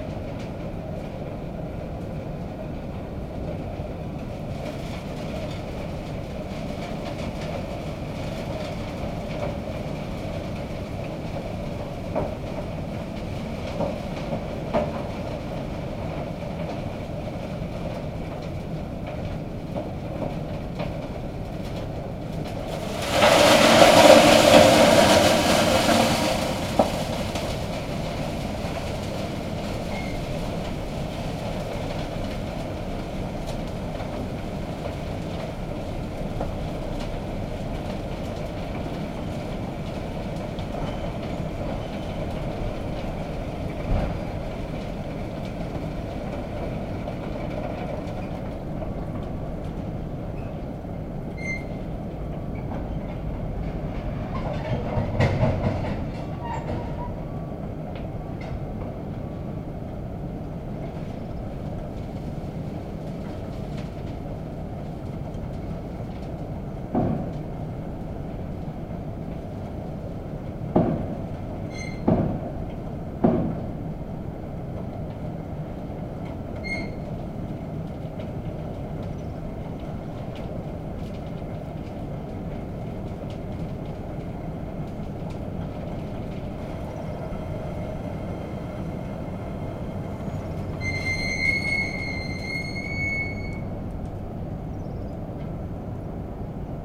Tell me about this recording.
Filling an entire train with cement. The train conductor was saying very bad words !